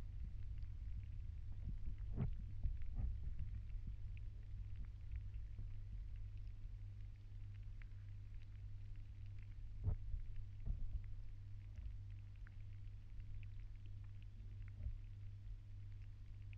Mic/Recorder: Aquarian H2A / Fostex FR-2LE